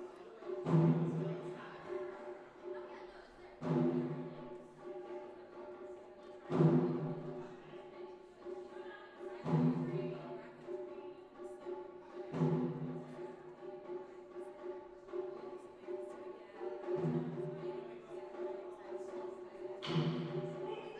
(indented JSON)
{
  "title": "Falmouth University, Penryn Campus, Treliever Road, Penryn, Cornwall, UK - Taiko Demonstration",
  "date": "2014-02-06 11:00:00",
  "description": "There was a man demonstrating Taiko drumming in the Performance Centre (Falmouth University). Recorded from 2 floors down through the building.",
  "latitude": "50.17",
  "longitude": "-5.12",
  "timezone": "Europe/London"
}